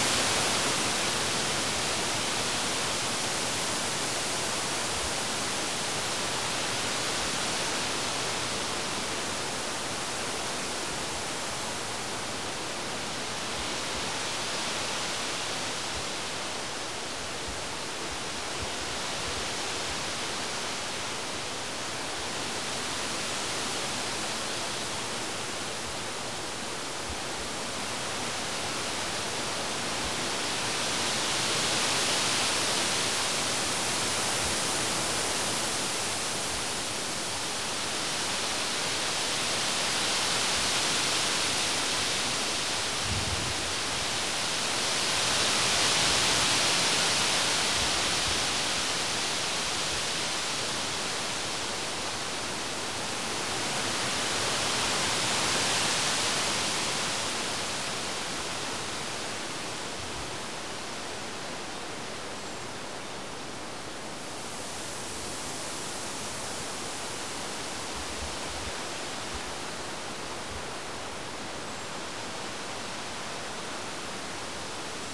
Sasino, forest road - trees quivering in the wind
a bunch of tall trees, mainly birch, swayed severely in the wind producing beautiful, intricate noise. a few birds were able to break through with their chirps but other than that the hiss was overwhelming the sound scape. no processing was done to the recording except 80Hz LPF turned on in the recorder in order to prevent wind blasts.